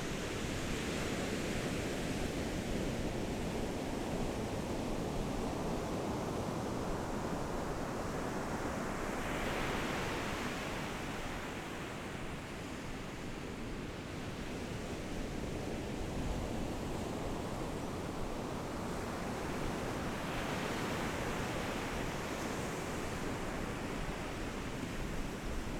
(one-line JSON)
{"title": "坂里沙灘, Beigan Township - sound of the waves", "date": "2014-10-13 13:14:00", "description": "Sound of the waves, In the beach, Windy\nZoom H6 XY", "latitude": "26.22", "longitude": "119.98", "altitude": "7", "timezone": "Asia/Taipei"}